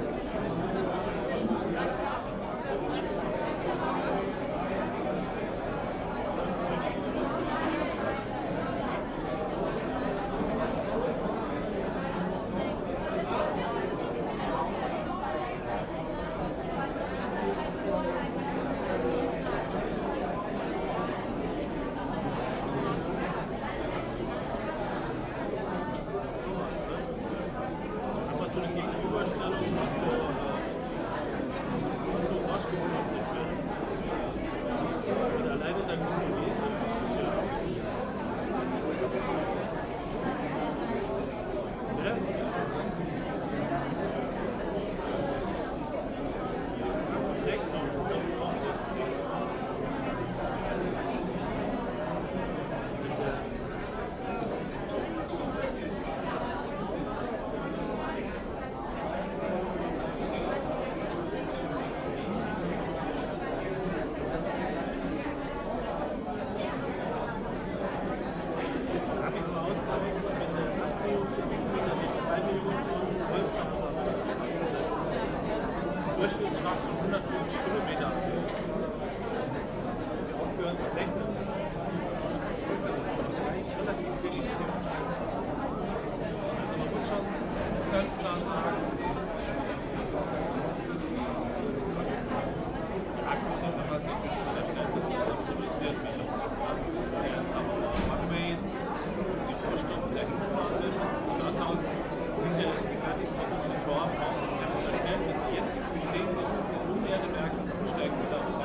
In a packed restaurant the crowd is rather talking than eating.
Münster, Germany, 1 October 2009